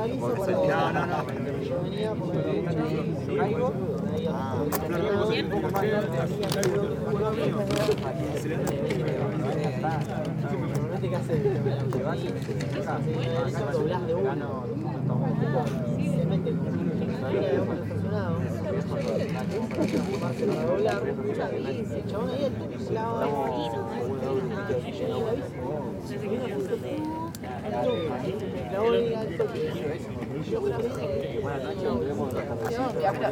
København, Denmark - Tourists bum around
Near a big lake, a group of Spanish tourists is drinking and screws around the water.
17 April 2019